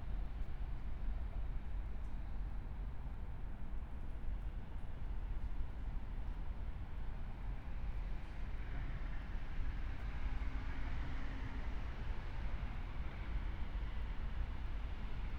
river Traun railway bridge, Linz - under bridge ambience
05:23 river Traun railway bridge, Linz